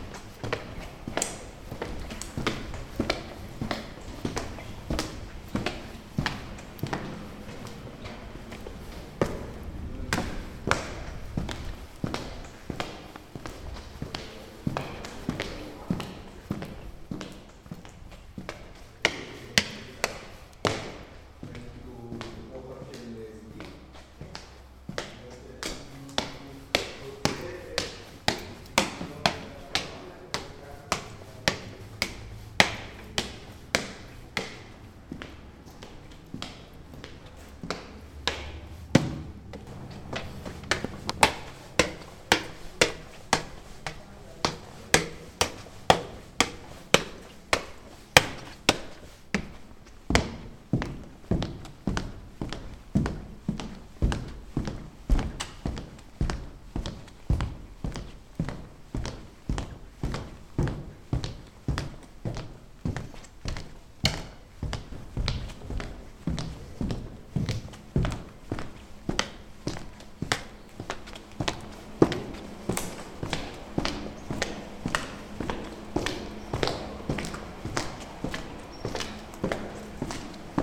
Hansen House, Jerusalem, Israel - Footsteps in Hansen House Interior
Recording of walking inside Hansen House, a former Hansen disease (Leprosy) hospital, today an arts and media center (Bezalel, Maamuta).
Uploaded by Josef Sprinzak